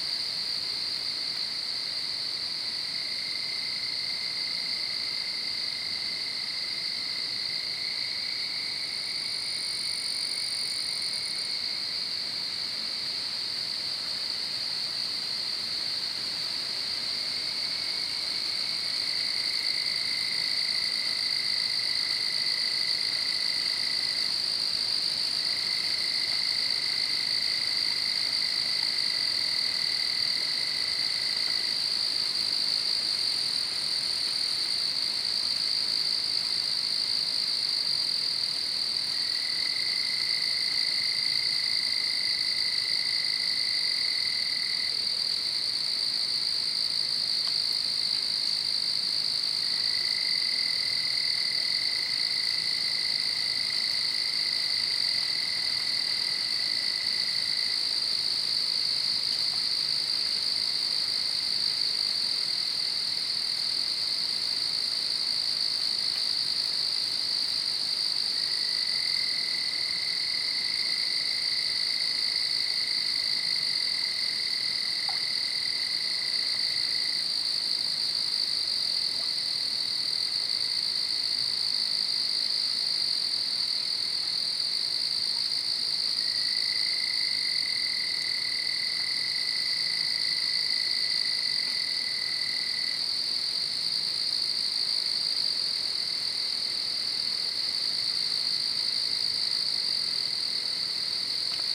Recorded with a pair of DPA4060's into a Marantz PMD661
TX, USA, 26 October 2015